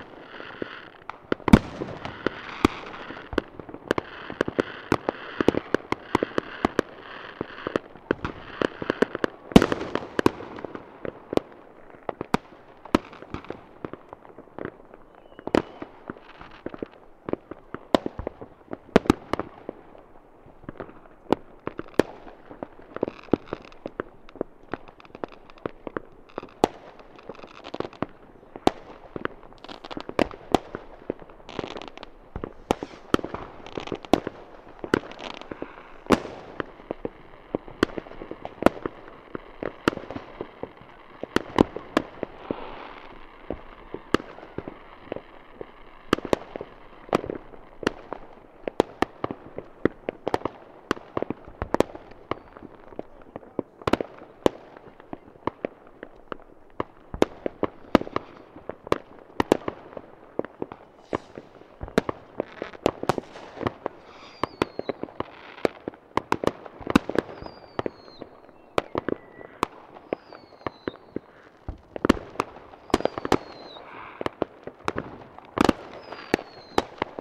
{"title": "Olsztyn, New Year - New Year fireworks", "date": "2008-01-01", "description": "New Year celebration. Fireworks, people shouting. Some talks near microphone.", "latitude": "53.77", "longitude": "20.44", "altitude": "118", "timezone": "Europe/Warsaw"}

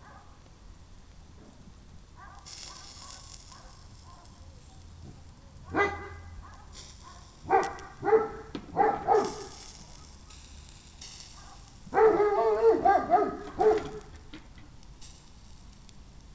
{"title": "Utena, Lithuania, shashlyk baking", "date": "2012-07-18 20:10:00", "description": "evening in the yard...shashlyk baking..dog", "latitude": "55.51", "longitude": "25.59", "altitude": "111", "timezone": "Europe/Vilnius"}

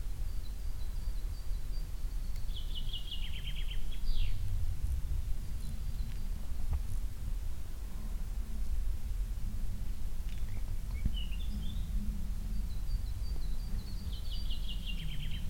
{"title": "näideswald, wheat field", "date": "2011-07-12 14:35:00", "description": "Laying at a wheat field. The crickets and birds, a dog breathing and passing, some traffic in the distance a plane crossing the sky.\nRecorded on a hot summer day in the morning time.\nNäidserwald, Weizenfeld\nAuf einem Weizenfeld liegend. Die Grillen und Vögel, ein Hund schnauft und läuft vorbei, etwas Verkehr in der Ferne, ein Flugzeug am Himmel. Aufgenommen morgens an einem heißen Sommertag.\nNäidserwald, champ de blé\nCouché dans un champ de blé. Les grillons et les oiseaux, un chien haletant qui passe, quelques véhicules dans le lointain, un avion dans le ciel. Enregistré le matin, un jour chaud en été.\nProject - Klangraum Our - topographic field recordings, sound objects and social ambiences", "latitude": "50.02", "longitude": "6.05", "altitude": "431", "timezone": "Europe/Luxembourg"}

